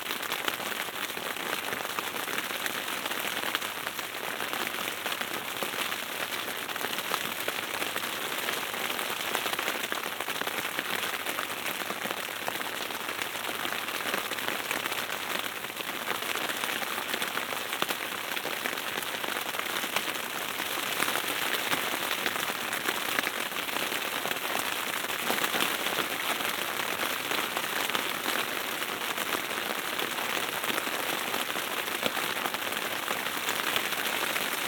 The rain knocks on the roof of the tent, White Sea, Russia - The rain knocks on the roof of the tent
The rain knocks on the roof of the tent.
Стук дождя по крыше палатки.